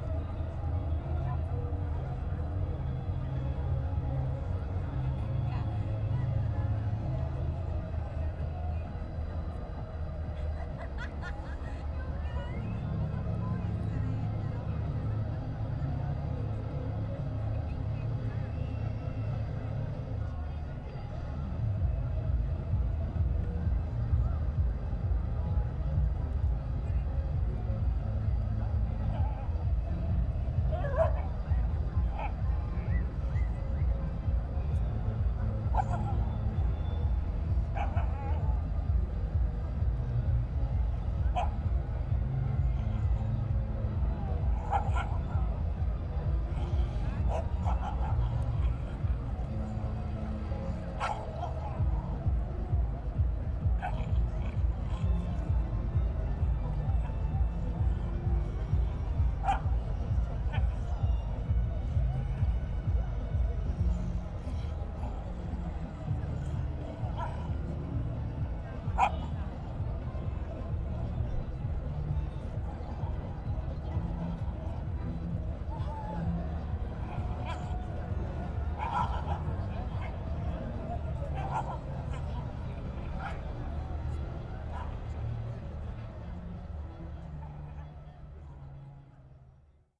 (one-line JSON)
{"title": "molo Audace, Trieste, Italy - weekend ambience, competing electroacoustics", "date": "2013-09-08 01:00:00", "description": "weekend ambience at Molo Audace, Trieste. two soundsystems, Tango and Techno, competing.\n(SD702, AT BP4025)", "latitude": "45.65", "longitude": "13.77", "altitude": "14", "timezone": "Europe/Rome"}